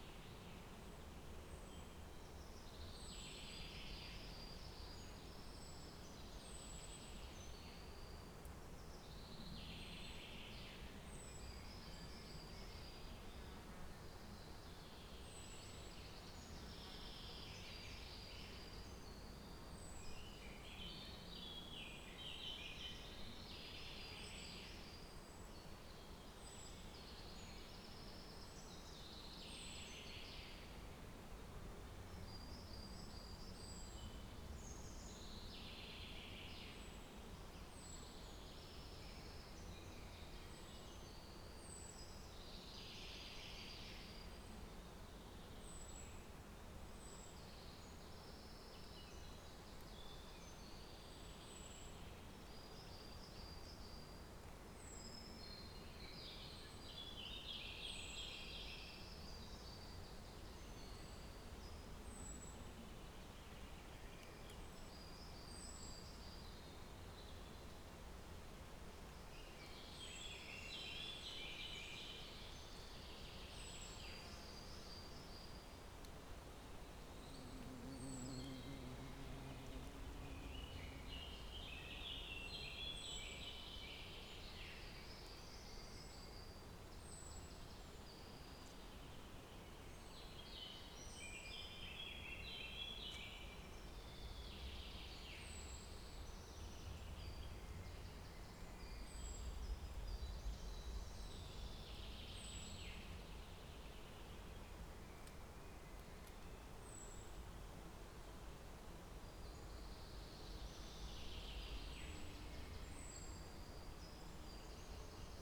Trnovo pri Gorici, Slovenia - Trnovo forest
Birds and wind in beech forest.
MixPre3 II with Lom Uši Pro.